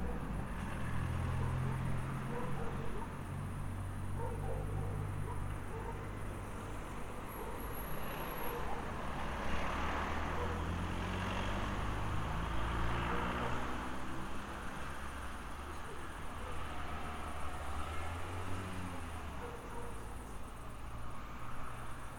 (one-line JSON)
{"title": "Andrei Mureșanu, Cluj-Napoca, Romania - Piata Ion Agarbiceanu", "date": "2016-08-13 21:21:00", "description": "A Saturday evening in the small park in Ion Agarbiceanu Square - traffic, restaurants and dogs barking.", "latitude": "46.76", "longitude": "23.61", "altitude": "368", "timezone": "Europe/Bucharest"}